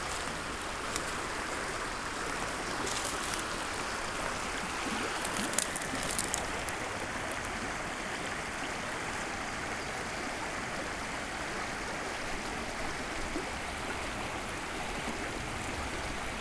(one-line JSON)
{"title": "Klein Wall, Löcknitztal, Grünheide, Deutschland - sound of river Löcknitz", "date": "2015-04-11 16:30:00", "description": "sound of the beautiful river Löcknitz, at village Klein Wall, near bridge\n(Sony PCM D50, DPA4060)", "latitude": "52.42", "longitude": "13.87", "altitude": "45", "timezone": "Europe/Berlin"}